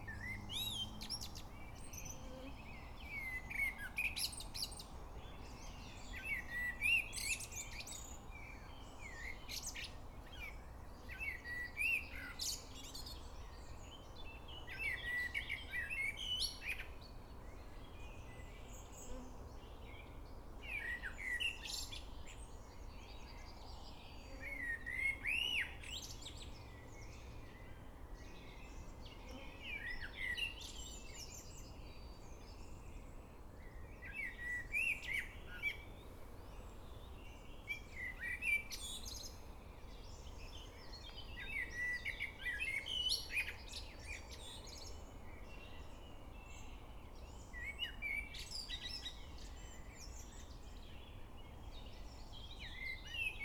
Приокский р-н, Нижний Новгород, Нижегородская обл., Россия - birds nn
Nizhegorodskaya oblast', Russia, 10 June 2016